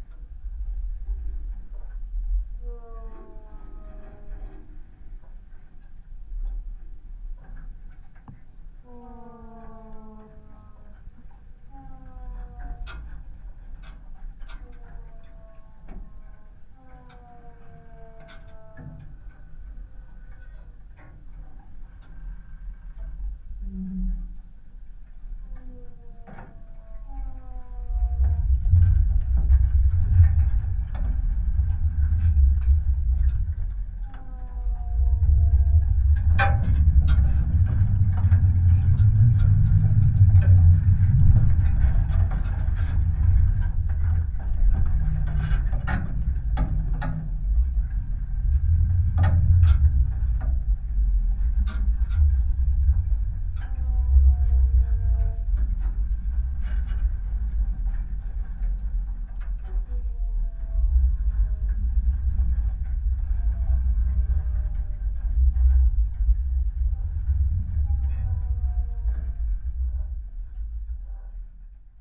Biliakiemis, Lithuania, the barbed wire
contact microphone on a loop of barbed wire found in a meadow
3 August